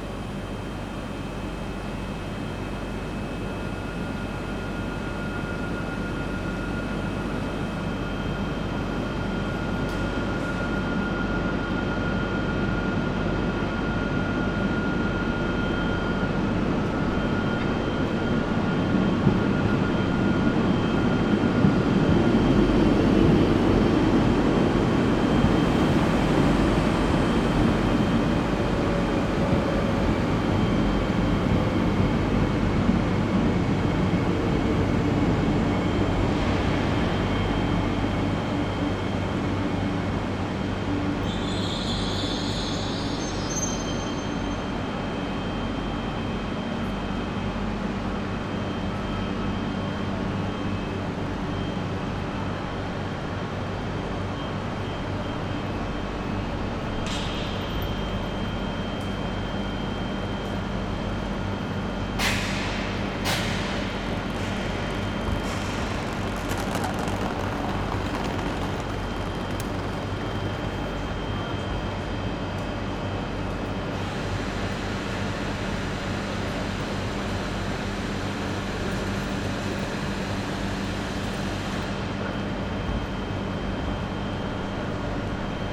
Rue Charles Domercq, Bordeaux, France - BDX Gare 02
train station
Captation : ZOOMH6